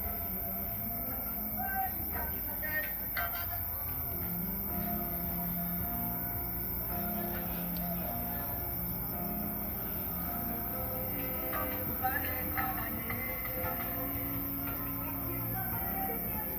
hystérie électorale CILAOS ÎLE DE LA RÉUNION, enregistrée au smartphone.